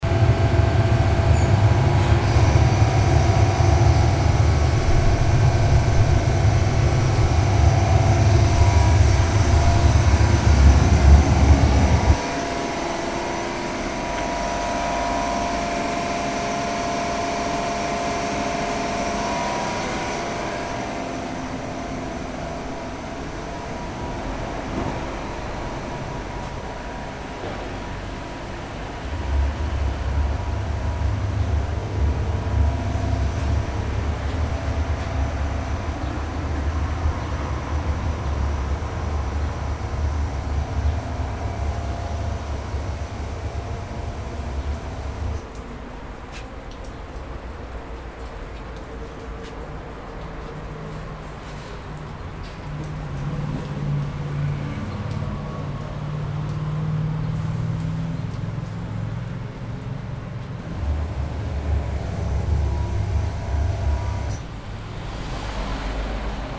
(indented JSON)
{
  "title": "Norient Office, Progr, Waisenhausplatz, Bern, Switzerland - construction site",
  "date": "2012-07-11 10:51:00",
  "description": "Construction site noises, machines, water, traffic at Kulturzentrum PROGR, just in front of the Norient Headquarters in Bern, Switzerland. Recorded on Zoom H4n by Michael Spahr (VJ Rhaps).",
  "latitude": "46.95",
  "longitude": "7.44",
  "altitude": "543",
  "timezone": "Europe/Zurich"
}